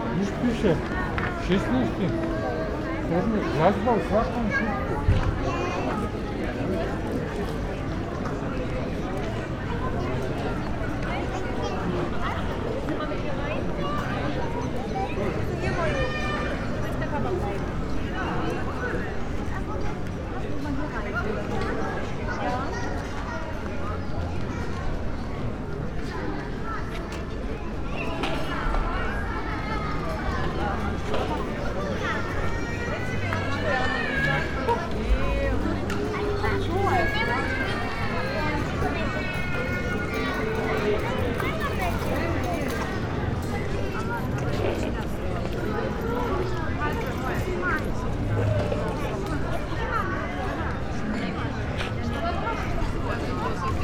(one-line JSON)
{"title": "piazza grande, Novigrad, Croatia - evening scape", "date": "2013-07-16 21:23:00", "description": "people chatting, young blackbird, moped ...", "latitude": "45.32", "longitude": "13.56", "altitude": "7", "timezone": "Europe/Zagreb"}